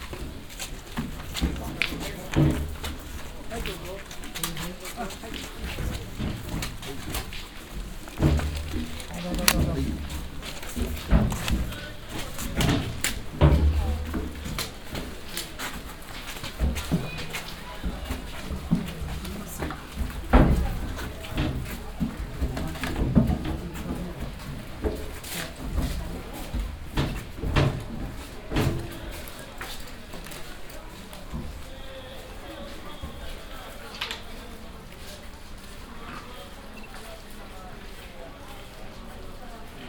nikkō, tōshō-gu shrine, walkway
a second recording on the walkway to the shogun shrine on a a mild but humid, nearly raining summer morning, here walking up wooden steps thru one of the main gates
international city scapes and topographic field recordings